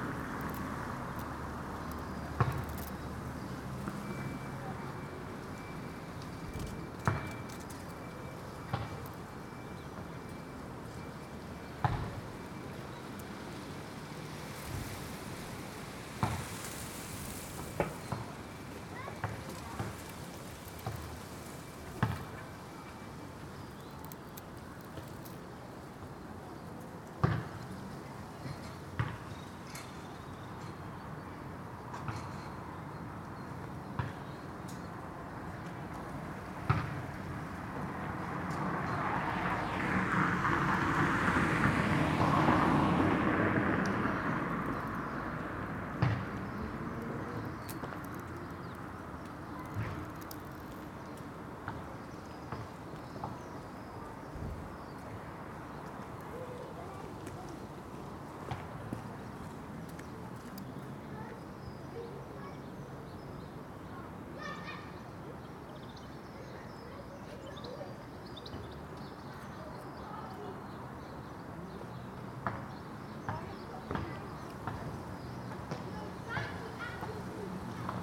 Contención Island Day 75 outer northeast - Walking to the sounds of Contención Island Day 75 Saturday March 20th
The Poplars Roseworth Avenue The Grove Moor Road North St Nicholas Avenue Rectory Grove
The street footballers bounce and shout
helicopter drones
below a single con-trail
The beech hedge glows
burnished copper
a mother and son run laps
North East England, England, United Kingdom, 20 March 2021